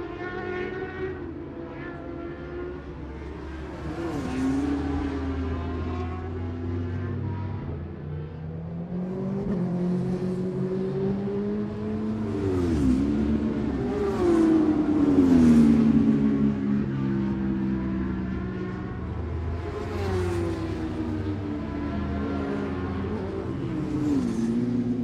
Scratchers Ln, West Kingsdown, Longfield, UK - British Superbikes 2005 ... FP1 ...
British Superbikes 2005 ... FP1 ... Audio Technica one point mic ...